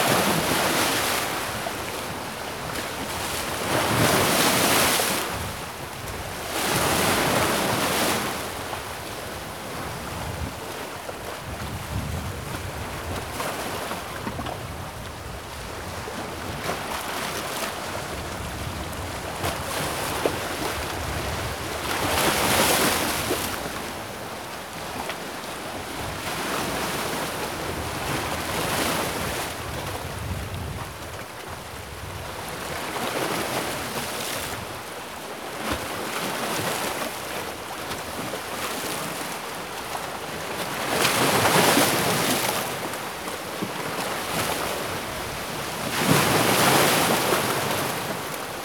Heraklion Yacht Port, pier leading to the old armory - waves
waves blasting on huge rocks